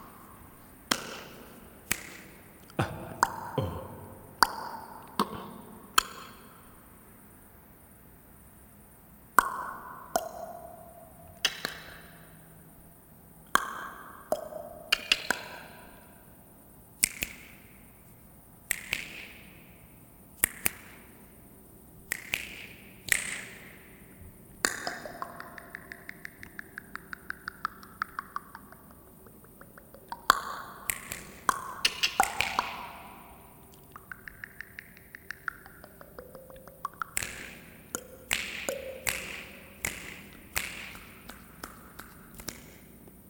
Maribor, Koroska cesta, Vinarjski potok - Jamming with location / triggering acoustics of an under street passage
No artificial processing, just playing with interesting naturally occuring echoes of a sub street passage.
15 August 2012, 22:30